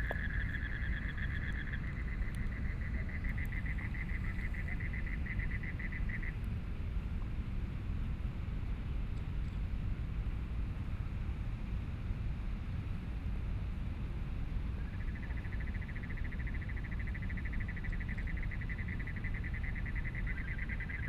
{"title": "中央公園, Sinsing District - in the Park", "date": "2014-05-15 20:09:00", "description": "Frogs sound, Tennis sounds", "latitude": "22.63", "longitude": "120.30", "altitude": "5", "timezone": "Asia/Taipei"}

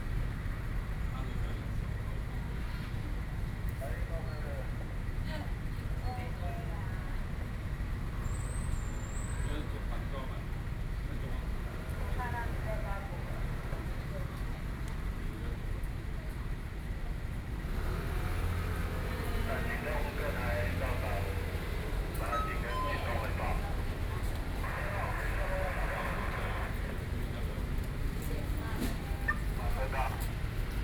{"title": "Taipei, Taiwan - The street corner", "date": "2013-03-22 20:09:00", "latitude": "25.03", "longitude": "121.52", "altitude": "16", "timezone": "Asia/Taipei"}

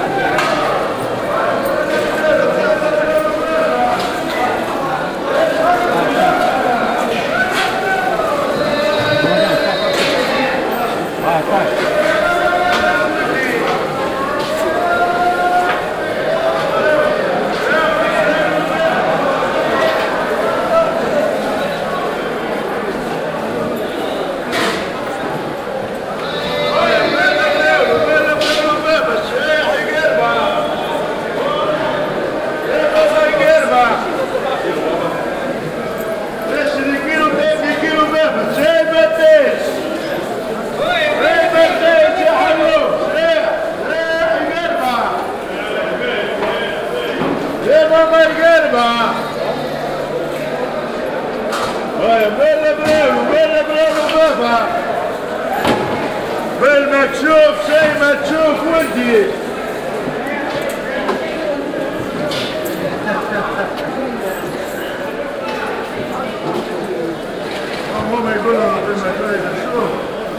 {"title": "Bab Bhar, Tunis, Tunesien - tunis, marche central", "date": "2012-05-03 12:30:00", "description": "Inside the crowded central market hall. The sound of people with plastic bags and fruit traders calling out prices.\ninternational city scapes - social ambiences and topographic field recordings", "latitude": "36.80", "longitude": "10.18", "altitude": "8", "timezone": "Africa/Tunis"}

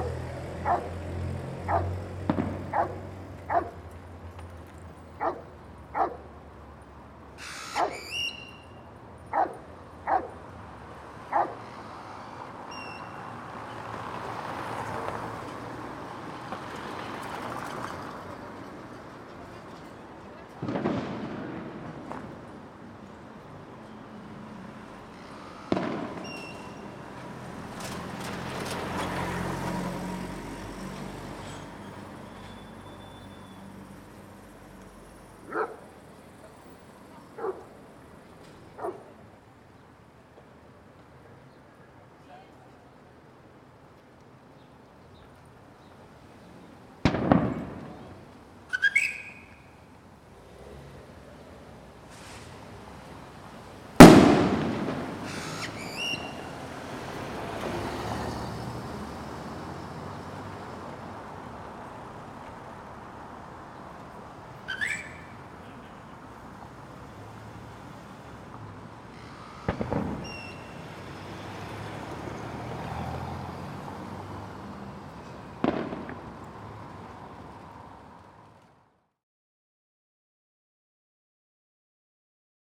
{"title": "Av. Morelos, Centro, San Andrés Cholula, Pue., Mexique - Cholula - Mexique", "date": "2019-09-22 10:00:00", "description": "Cholula - Mexique\nAmbiance de rue - Matin", "latitude": "19.06", "longitude": "-98.30", "altitude": "2153", "timezone": "America/Mexico_City"}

